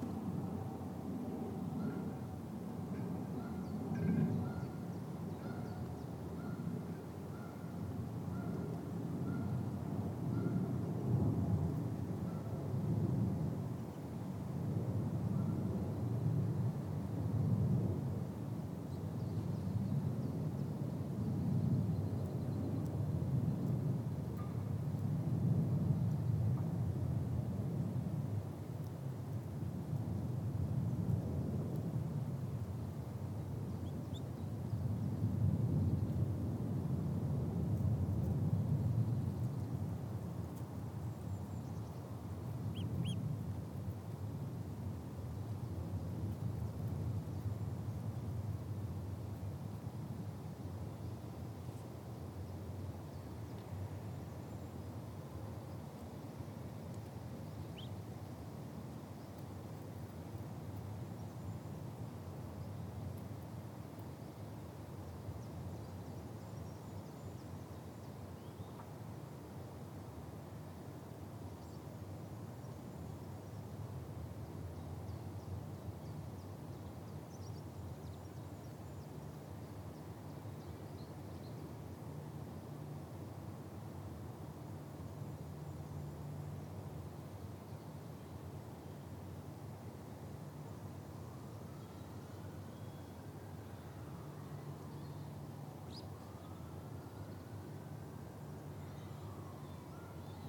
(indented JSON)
{
  "title": "Whiteknights Lake, University of Reading, Reading, UK - Ducks, Swan, Geese and Aeroplane",
  "date": "2017-04-12 16:37:00",
  "description": "There is an awful lot going on with the waterfowl of the lake this spring; in this recording you can hear ducks quacking and a very territorial swan grunting and hissing (he is waiting for the eggs of himself and his mate to hatch). Keen little gangs of male ducks can also be heard, their quacks are a bit raspier than the female's... and the huffing, gaspy noise is an Egyptian goose who is guarding two goslings and his female mate. Canada geese can be heard honking in the background. There are aeroplanes above, it is very rare to get any recordings in Reading without them, and a little wind because it was quite a windy day... but I'm hopeful you'll enjoy this sonic glimpse of the lake and its residents, who are all very busy making or waiting for babies. There is also a pheasant that honks part way through the recording, and you can hear the tiny little cheep-cheeps of the goslings, and the snipping sound of their parents' chewing the grass by the lake.",
  "latitude": "51.44",
  "longitude": "-0.94",
  "altitude": "61",
  "timezone": "Europe/London"
}